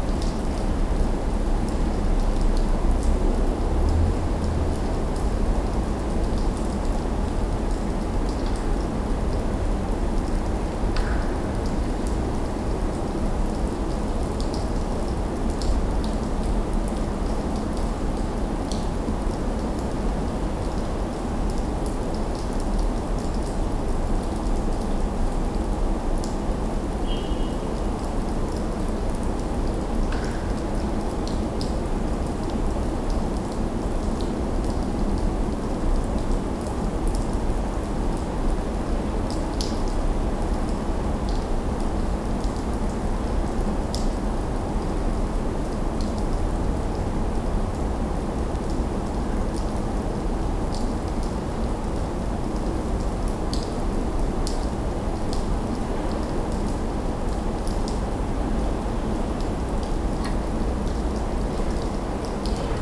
Moscow, B. Kozikhinskiy side-street - Big freeze is out!
Thaw after Big freeze.
Marantz PMD-661 int. mic.
15 February 2012